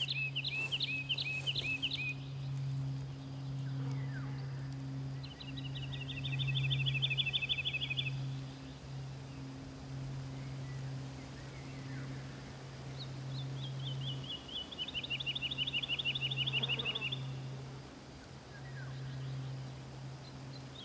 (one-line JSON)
{"title": "Fürstenberger Wald- und Seengebiet, Germany - Lovely song of the Woodlark", "date": "2015-05-15 18:37:00", "description": "with a light breeze hissing the leaves in the birch and beach trees and occasional golden orioles, crows and blackbird in the background.", "latitude": "53.06", "longitude": "13.38", "altitude": "55", "timezone": "Europe/Berlin"}